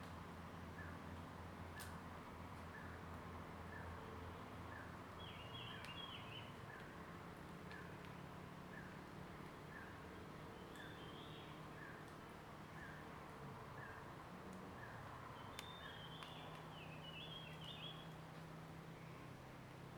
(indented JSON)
{
  "title": "Shuishang Ln., Puli Township 桃米里 - Bird sound",
  "date": "2016-03-26 06:11:00",
  "description": "Morning in the mountains, Bird sounds, Traffic Sound\nZoom H2n MS+XY",
  "latitude": "23.94",
  "longitude": "120.92",
  "altitude": "534",
  "timezone": "Asia/Taipei"
}